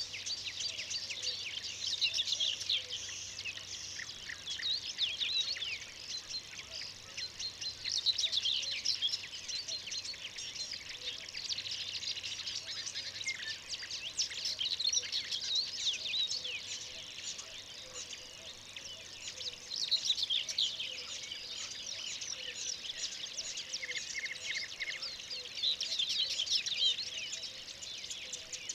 morning bird chorus, Mooste
late spring bird chorus